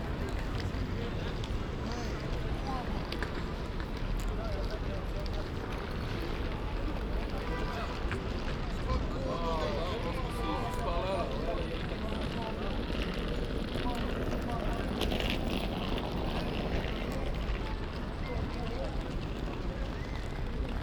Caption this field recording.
"Sunday walk at railway station in Paris in the time of COVID19": Soundwalk, Sunday, October 18th 2020: Paris is scarlett zone for COVID-19 pandemic. Walking in the Gare de Lyon railway station before taking the train to Turin. Start at 1:12 p.m. end at 1:44 p.m. duration 32’12”, As binaural recording is suggested headphones listening. Path is associated with synchronized GPS track recorded in the (kmz, kml, gpx) files downloadable here: For same set of recordings go to: